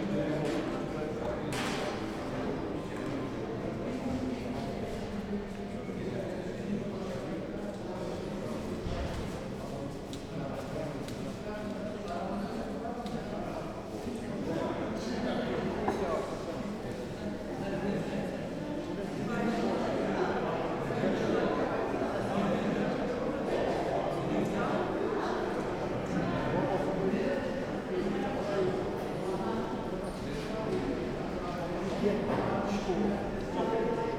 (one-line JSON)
{"title": "berlin, urban hospital - cafeteria", "date": "2010-01-11 13:40:00", "description": "berlin, urban-hospital (urbankrankenhaus), cafeteria, just a few people", "latitude": "52.49", "longitude": "13.41", "altitude": "38", "timezone": "Europe/Berlin"}